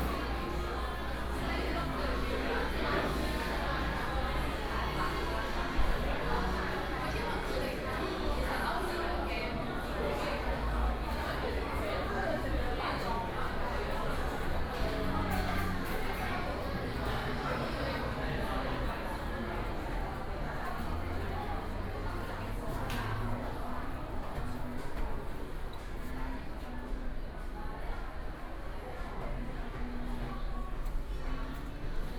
Eslite Bookstore, Sec., Xinsheng S. Rd. - Stairwell
inside the Bookstore, Stairwell, Sony PCM D50 + Soundman OKM II
Taipei City, Taiwan, 7 August